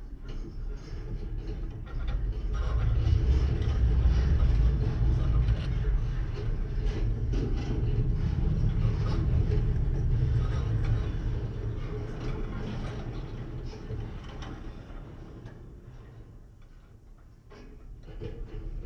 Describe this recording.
This random pile of fencing wire abandoned decades ago is still silvery and un-rusted, but grass and other plants grow through it. In wind it moves as complex interlinked system creating percussive hums from low bass to higher pitches that reverberate inside. Unhearable to the ear, but audible to contact mics. The contact mics are the simplest self made piezos, but using TritonAudio BigAmp Piezo pre-amplifiers, which are very effective. They reveal bass frequencies that previously I had no idea were there.